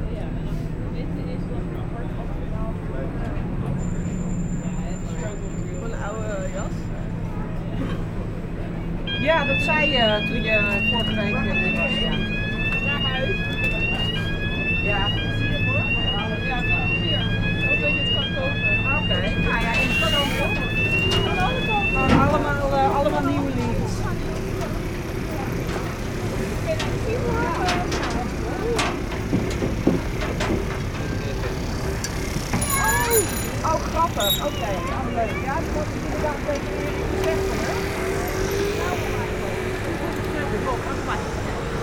Amsterdam, Nederlands - Veer Buiksloterweg ferry
Het Ij, Veer Buiksloterweg. Crossing the river using the ferry. A person is phoning just near, with a strong voice.